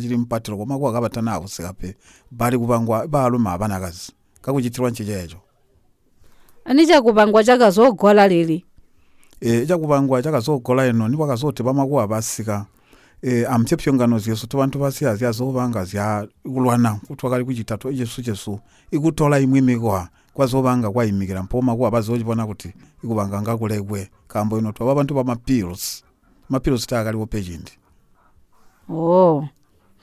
Regina Munkuli interviews Mr. Mwinde, Historian at Chief Siansali’s Court, about the traditional tribal identification which was customary among Batonga women, namely the removal of the front six teeth. Regina then asks about the traditional marriage among the Batonga and Samuel Mwinde describes in detail how, and through whom a marriage was arranged between two families. The interview also briefly touches upon the performance of Ngoma Buntibe; Mr Mwinde explains that traditionally, it is played to honour a married man who has passed on, mainly, for a chief or headman. Traditionally, it is only performed in the context of a funeral.
Zimbabwe